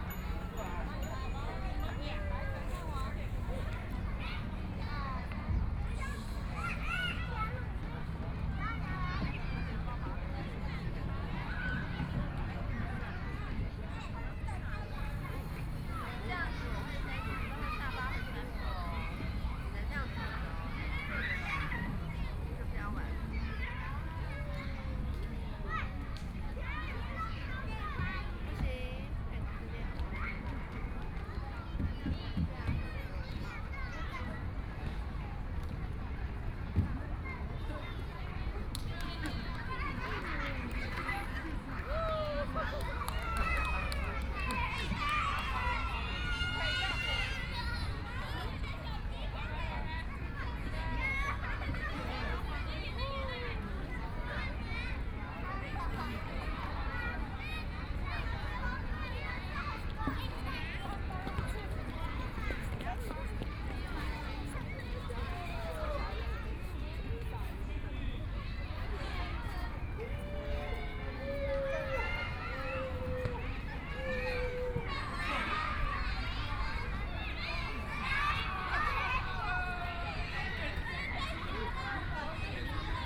碧湖公園, Taipei City - Kids play area
Sitting in the park, Kids play area
Binaural recordings
Taipei City, Taiwan, 15 March 2014, 16:49